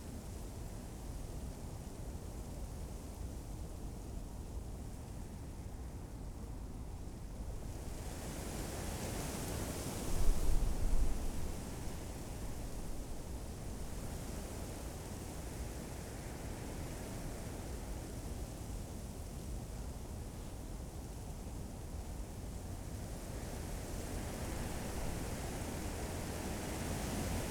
late summer afternoon ambience under a birch tree
(SD702, S502 ORTF)